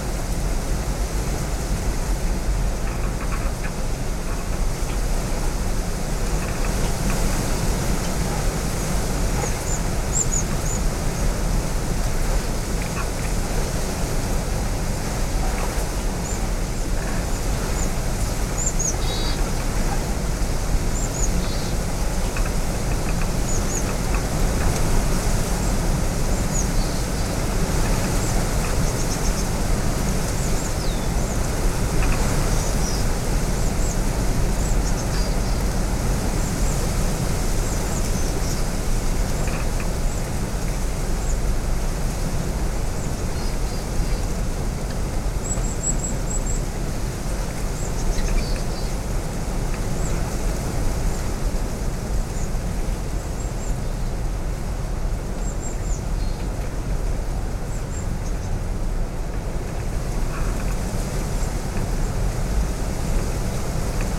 Strengbergsgatan 7 - In a shed by the sea
Recorded on a windy day in a broken shed near the sea, Härnöverken, Härnösand. Recorded with two omnidirectional microphones
18 September 2020, 21:14